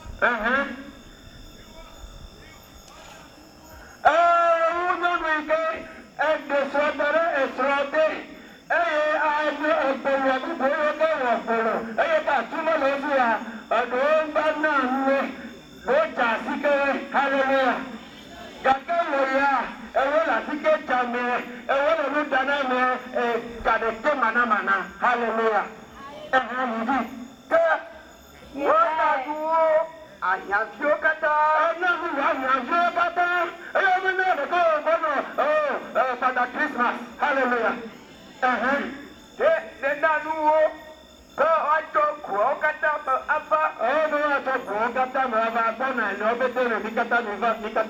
Unnamed Road, Kpando, Ghana - Church of ARS
Church of ARS in Kpando
14 August, ~18:00